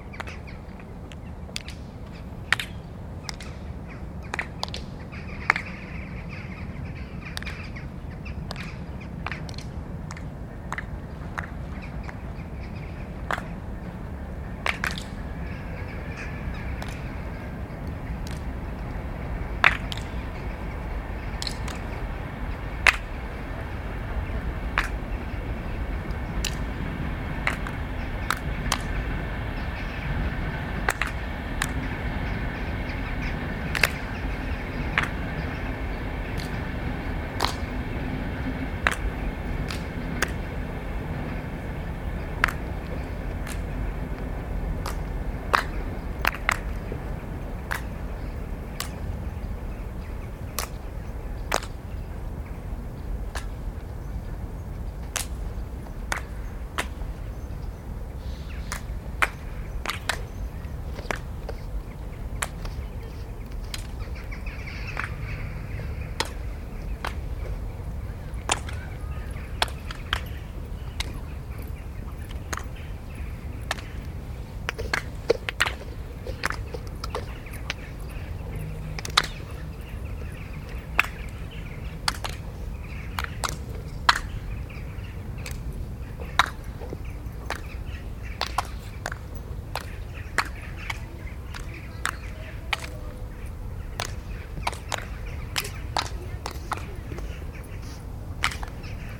Stromovka Park clapping action
clapping action for
ew maps of time workshop in Prague. 3 recordings were synchronized and merged to form this piece.